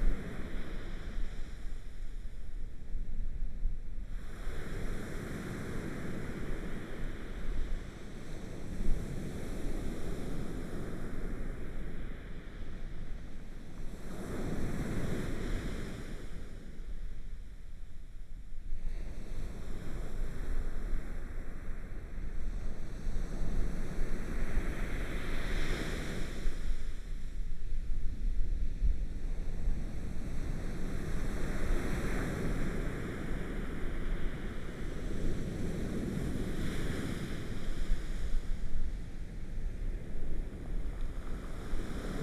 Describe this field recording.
Sound of the waves, Zoom H4n+Rode NT4